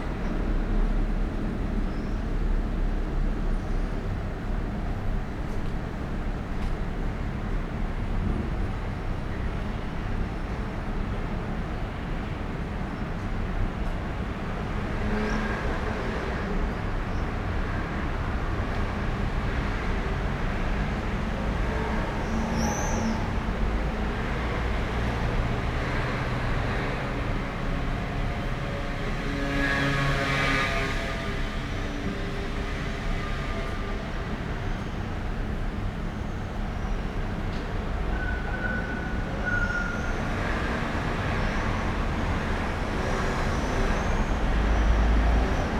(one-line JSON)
{"title": "Poznan, Jerzyce, at the office, small larder - out of the window of small larder", "date": "2013-07-18 08:44:00", "description": "street ambience recorded from a small window in a back room in the office. heavy traffic, cars, trams, all trains heading north and east swing on the tracks nearby", "latitude": "52.41", "longitude": "16.91", "altitude": "73", "timezone": "Europe/Warsaw"}